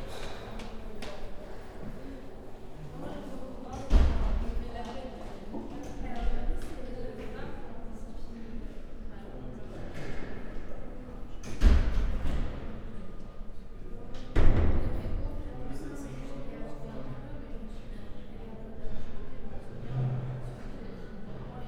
L'Hocaille, Ottignies-Louvain-la-Neuve, Belgique - Socrate audience
In the corridors, a small time before the courses.
Ottignies-Louvain-la-Neuve, Belgium